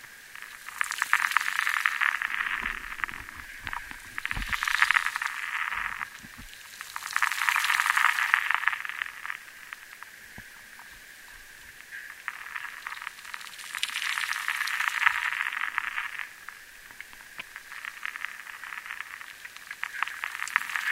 Santa Croce. Seashore.
Recording made with a contact microphone under the sand of the beach
Sorgenti di Aurisina Province of Trieste, Italy